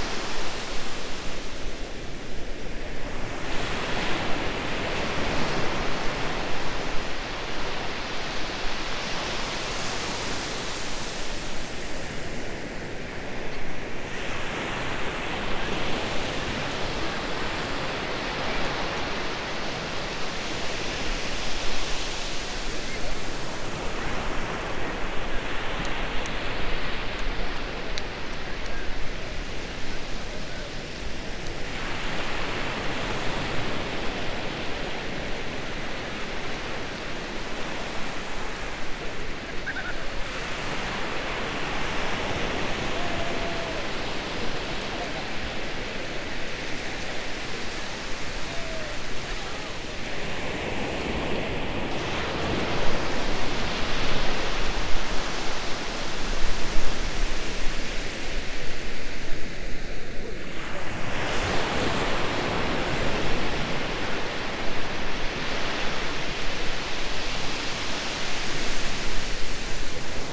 Hunting Island, SC, USA - Hunting Island South Beach
Gentle ocean surf on Hunting Island State Park's south beach. The wind and currents were calmer than usual on this day. People can be heard walking past the rig, and sounds from behind the recorder can be heard.
[Tascam Dr-100mkiii & Primo EM-272 omni mics]
South Carolina, United States, December 2021